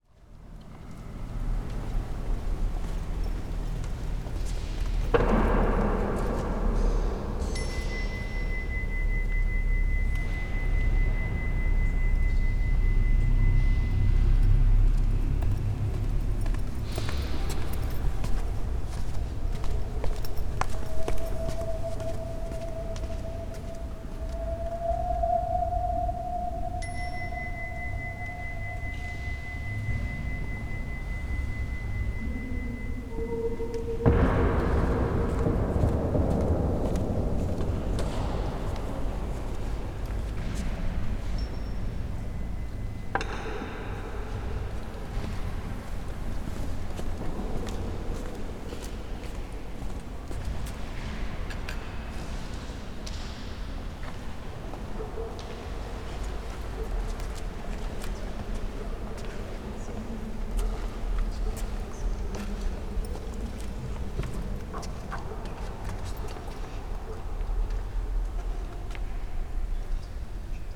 Mariánský Týnec monastery, Kralovice, Czechia - chapel ambience
Ambience inside the magnificent chapel of the monastery. Recorded during the 'Architecture of the Senses' seminar organized by the Agosto Foundation.
14 October 2018, 14:32